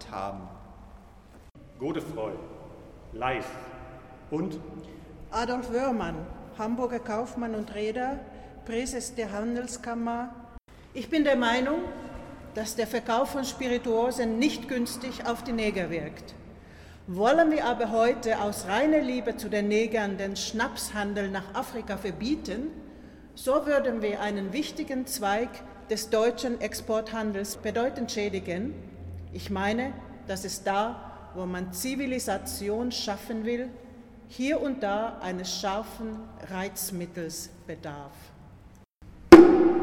Echos unter der Weltkuppel 07 Garderobe Beförderer
University of Hamburg, Hamburg, Germany, 1 November 2009